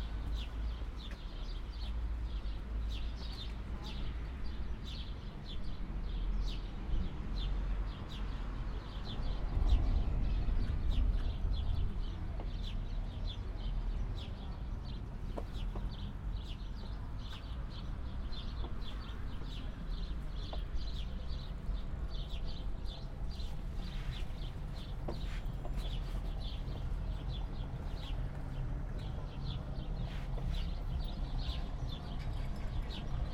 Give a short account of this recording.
*Best listening experience on headphones. Engaging sound events within a clear acoustic space of the forecourt of the main station of Weimar. Radiogenic voices, movements, birds and people. Major city arrivals and transits take place here. Stereo field is vivid and easily distinguishable. Recording and monitoring gear: Zoom F4 Field Recorder, LOM MikroUsi Pro, Beyerdynamic DT 770 PRO/ DT 1990 PRO.